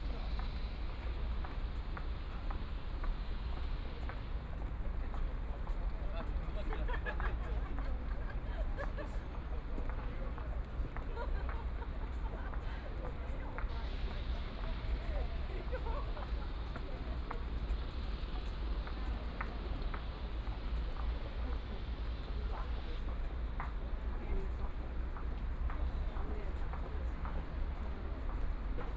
Follow the footsteps, Binaural recording, Zoom H6+ Soundman OKM II
Pudong, Shanghai, China